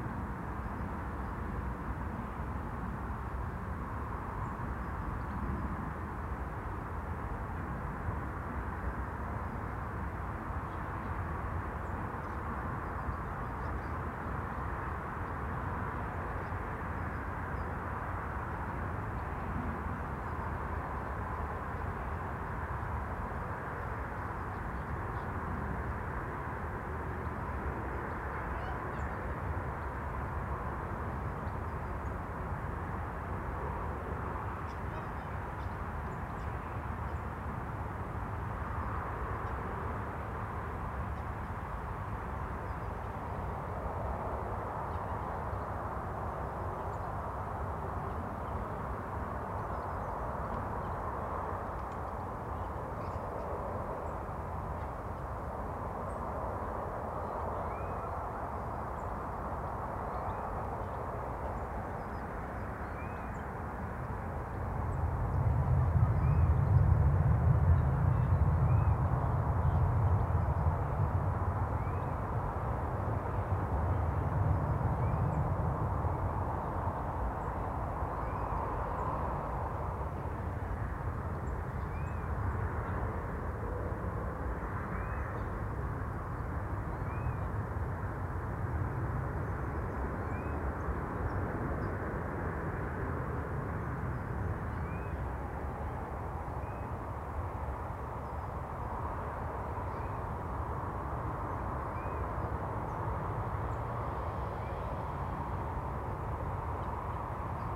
{"title": "Contención Island Day 20 outer southwest - Walking to the sounds of Contención Island Day 20 Sunday January 24th", "date": "2021-01-24 10:51:00", "description": "The Drive Moor Place Woodlands Oaklands Avenue Oaklands Grandstand Road Town Moor High Street Moor Crescent The Drive\nA mix of ash hawthorn and oak\nA robin moves through\nthe dense branches of the hawthorns\nA tit calls one carrion crow\nBright sunshine bounces off frosted grass.\nA plane takes off\nfour miles away and clearly audible\nWalkers climb the hill\nup and more circumspectly down", "latitude": "54.99", "longitude": "-1.63", "altitude": "79", "timezone": "Europe/London"}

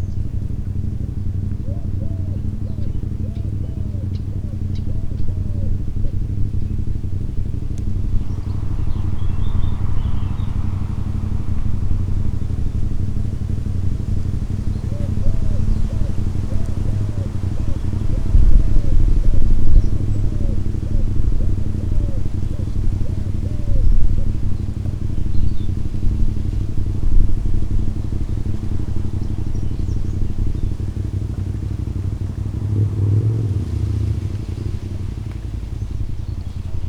{"title": "Jarenina, Slovenija - Jarenina - wind, birds & a motorbike", "date": "2012-06-03 14:55:00", "description": "Persistant wind, different birds, one of them singing in exact intervals. The sound of a motorbike not far away, slowly getting on the way and disappearing in the distance.", "latitude": "46.63", "longitude": "15.70", "altitude": "280", "timezone": "Europe/Ljubljana"}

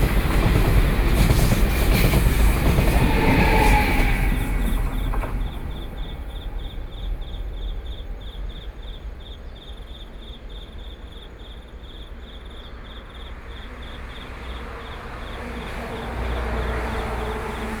{"title": "頭城鎮大里里, Yilan County - Insects sound", "date": "2014-07-21 16:31:00", "description": "At the roadside, Traffic Sound, Sound of the waves, The sound of a train traveling through, Very hot weather, Insects sound, Birdsong, Under the tree\nSony PCM D50+ Soundman OKM II", "latitude": "24.97", "longitude": "121.92", "altitude": "18", "timezone": "Asia/Taipei"}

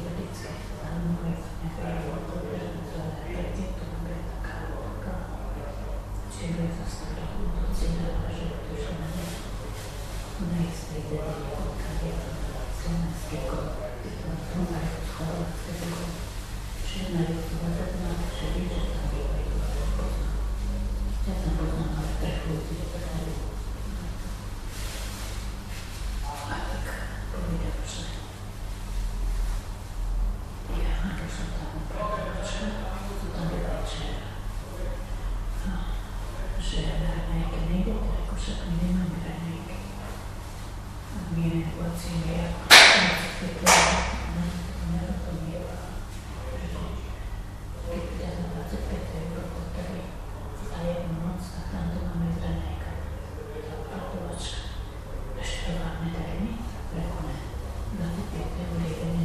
{"title": "Lamač, Slovenská republika - Ladies chatting in the waiting room of the train station Bratislava-Lamač", "date": "2012-11-29 09:31:00", "description": "Actually they seemed as if using the waiting room as a chat room during wintertime. One of them was treating a thin plastic bag with her hands.", "latitude": "48.18", "longitude": "17.05", "altitude": "203", "timezone": "Europe/Bratislava"}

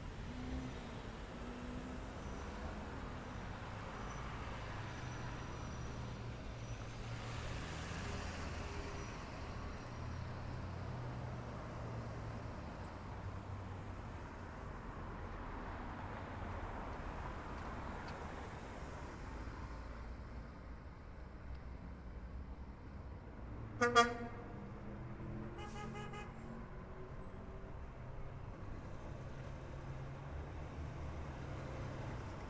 {"title": "East Elmhurst, Queens, NY, USA - Sitting Underneath The LaGuardia Airport Welcome Sign", "date": "2017-03-03 14:30:00", "description": "Traffic intersection at the entrance to LaGuardia Airport", "latitude": "40.77", "longitude": "-73.89", "altitude": "8", "timezone": "America/New_York"}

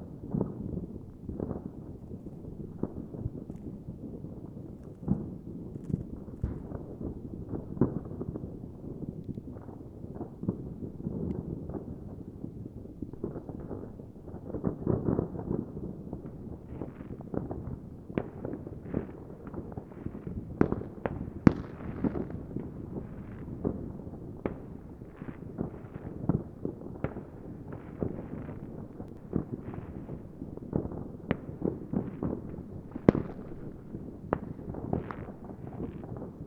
{"title": "geesow: salveymühlenweg - the city, the country & me: new year's eve fireworks", "date": "2014-01-01 00:01:00", "description": "on a hill overlooking the lower oder valley, new year's eve fireworks from around the valley, church bells\nthe city, the country & me: january 1, 2014", "latitude": "53.25", "longitude": "14.37", "timezone": "Europe/Berlin"}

